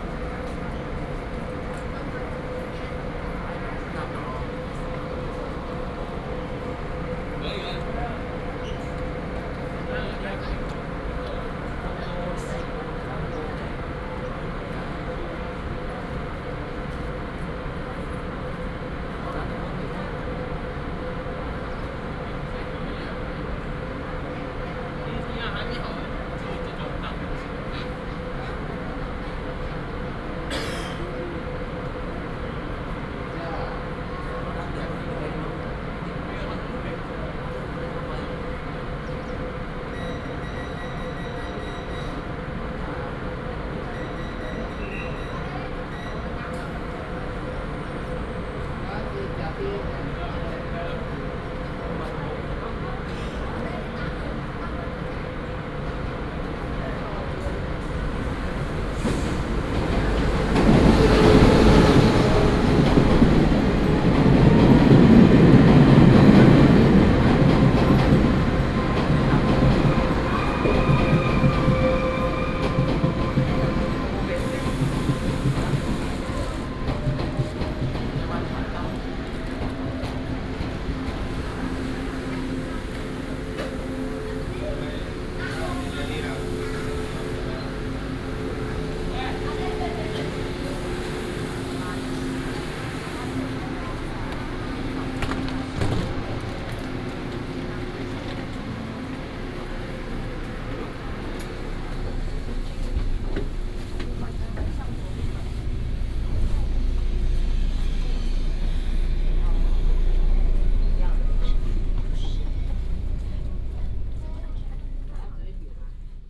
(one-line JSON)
{"title": "嘉義火車站, Taiwan - Walking at the train station", "date": "2018-02-17 08:12:00", "description": "Walking at the train station, From the station lobby to the station platform, The train arrived\nBinaural recordings, Sony PCM D100+ Soundman OKM II", "latitude": "23.48", "longitude": "120.44", "altitude": "35", "timezone": "Asia/Taipei"}